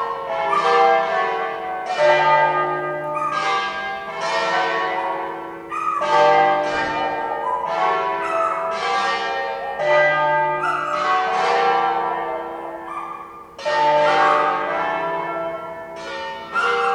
Via Bossi, Pavia, Italy - Another concert for bells and dog
Sunday concert from the same church and the same dog